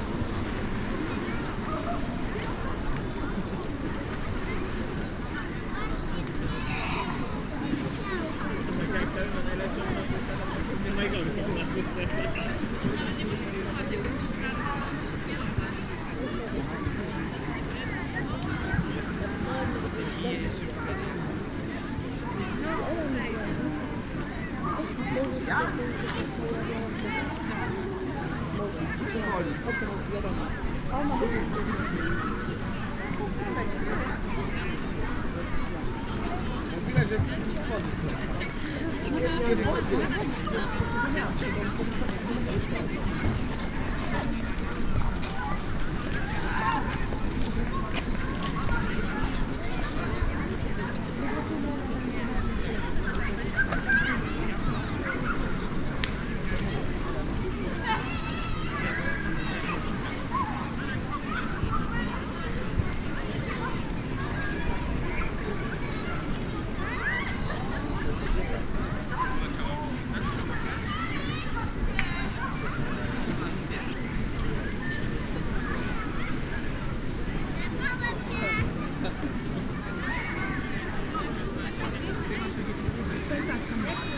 Strzeszyn, Strzeszynskie lake - lawn near pier
plenty of people resting on a lawn at the lake shore, swimming, playing badminton, riding bikes. mellow atmosphere on a sunny Sunday afternoon. (sony d50)